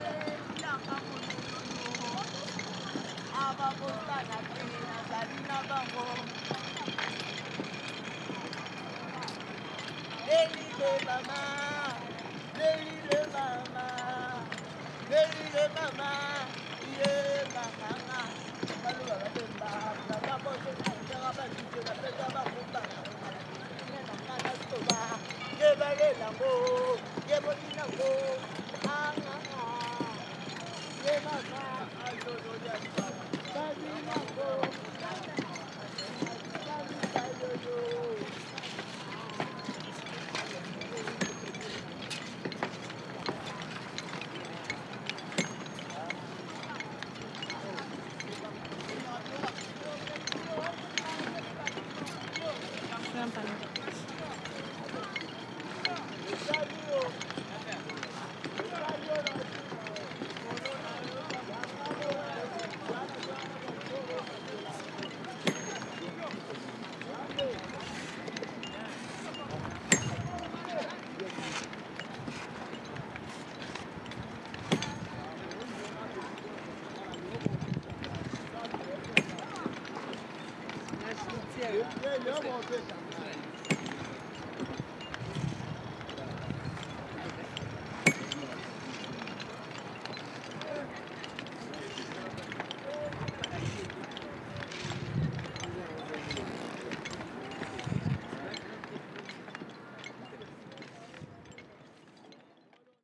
Avenue de Lecole, Kinshasa, République démocratique du Congo - stone quarry
People break stones, hammering, talking and singing.